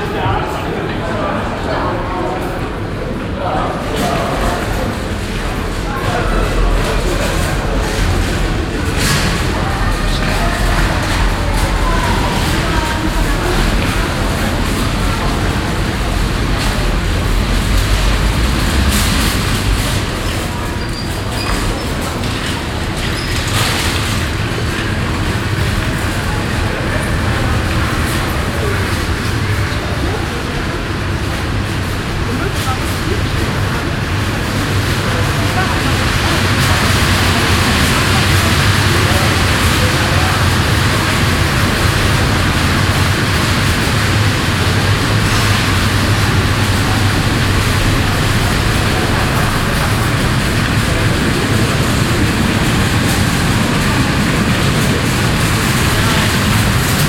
monheim, rathaus center, besucher + einkaufswagen
nachmittags in der einkaufspassage rathaus center, passanten, jugendliche, eine lange reihe von einkaufwagen
soundmap nrw:
social ambiences, topographic fieldrecordings